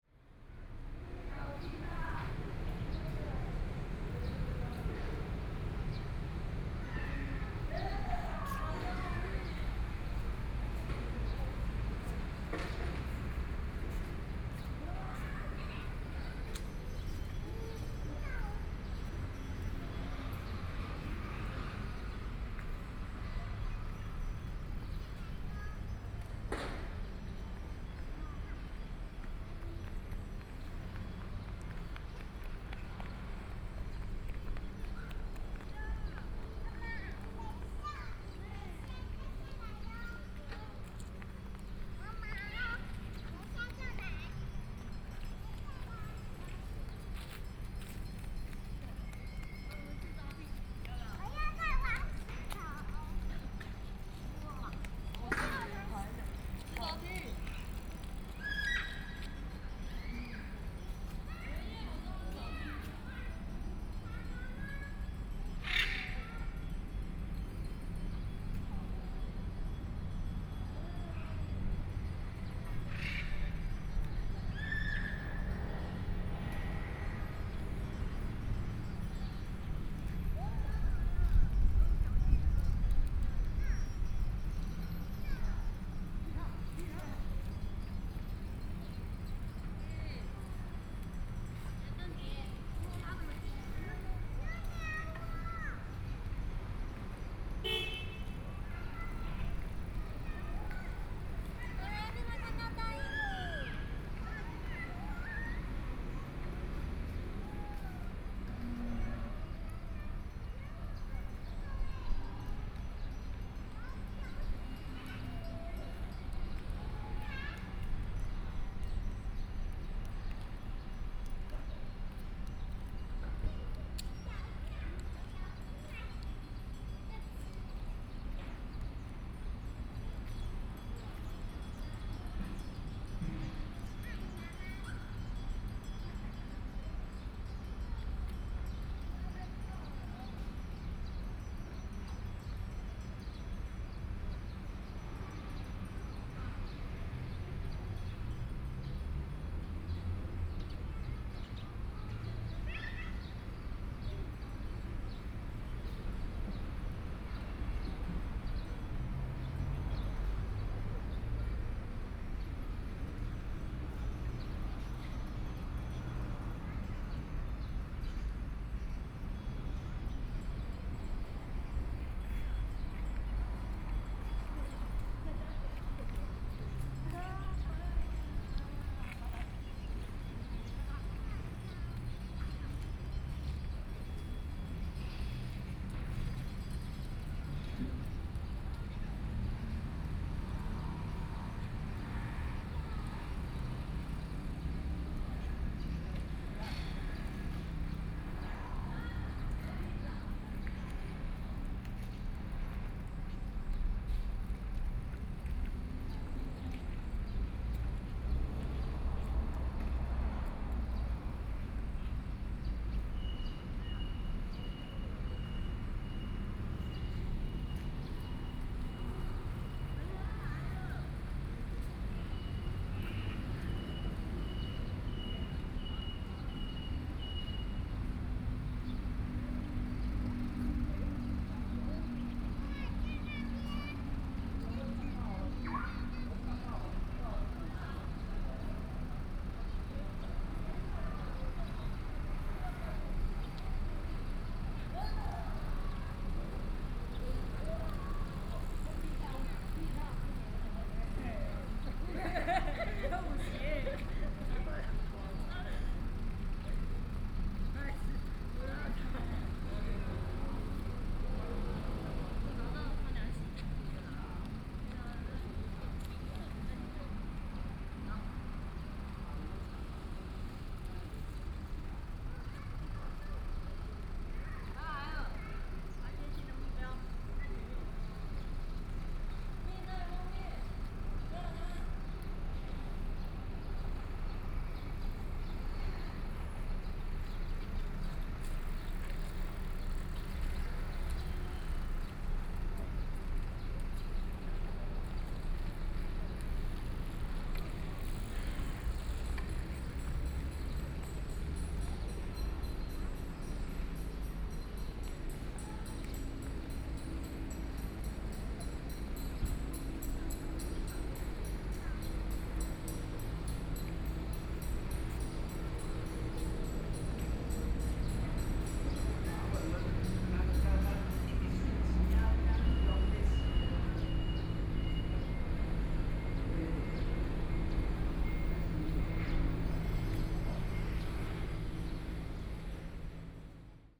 in the Park, Traffic Sound
Sony PCM D50+ Soundman OKM II
Yilan County, Taiwan, July 2014